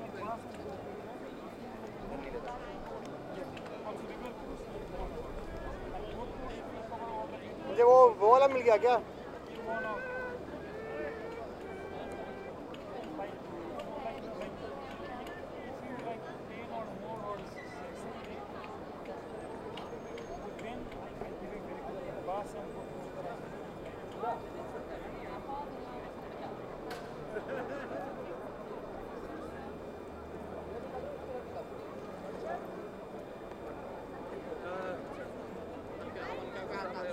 Praha 1, Czechia, Old Town Square